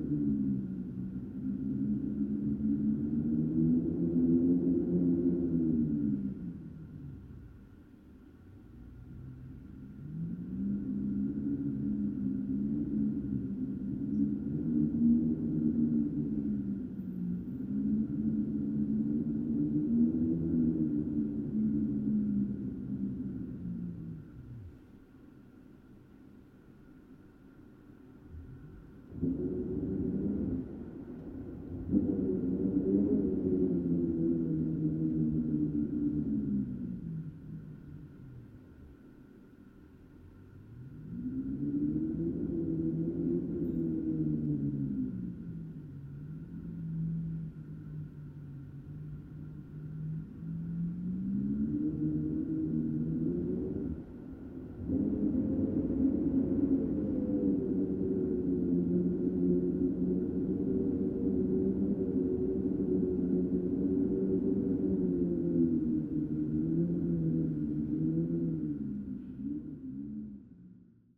gorod Vorkuta, République des Komis, Russie - howling wind
Mid-side stereo recording of an howling polar wind in Vorkuta.